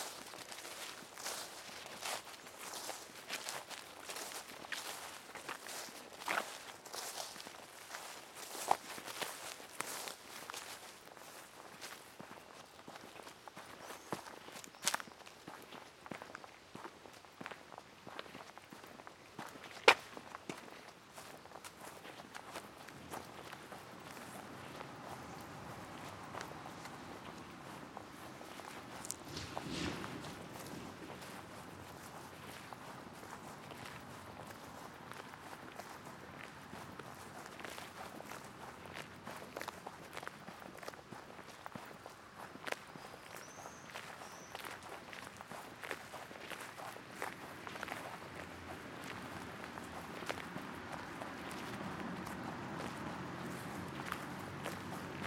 Kintai, Lithuania, a walk to art residence
Going through the forest and stadium from the beach to Kintai art residence